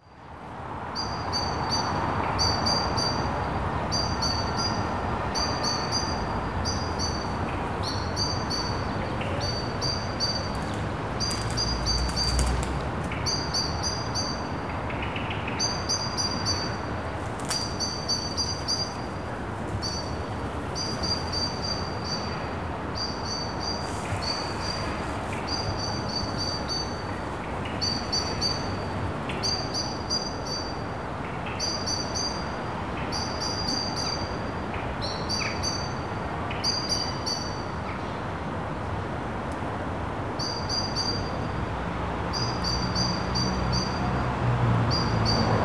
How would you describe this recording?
a bird chirping away in one of the trees in a small garden behind the church of st. martin.